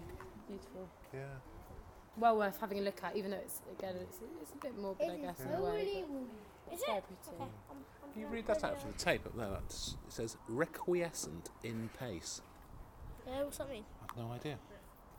Efford Walk Two: Garden of rest - Garden of rest